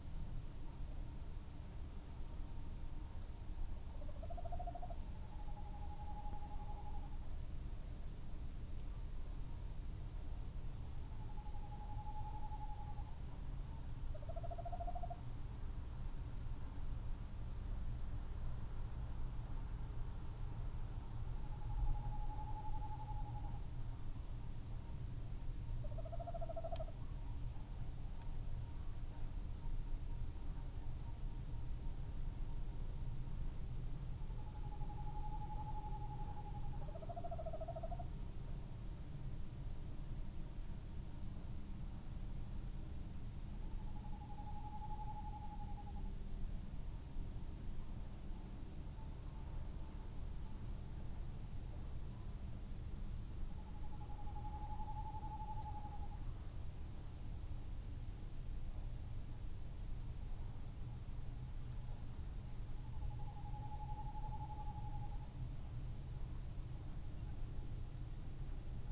Unnamed Road, Malton, UK - tawny owls ...
tawny owls ... male territorial song ... tremulous hoot call ... SASS ...
15 May 2019